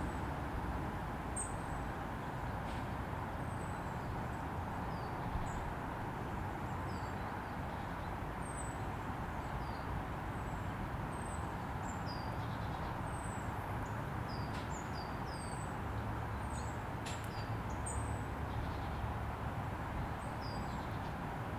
Gravias, Ag. Paraskevi, Greece - facing Ymittos mountain from ACG Deree
recorded with zoom h4n
Περιφέρεια Αττικής, Αποκεντρωμένη Διοίκηση Αττικής, Ελλάς